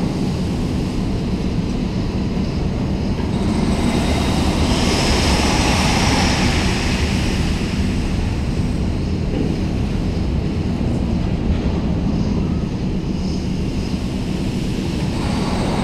Charleroi, Belgium - Industrial soundscape
Industrial soundscape near the Thy-Marcinelle wire-drawing plant, a worker moving an enormous overhead crane, and charging rolls of steel into an empty boat.
15 August 2018, ~10:00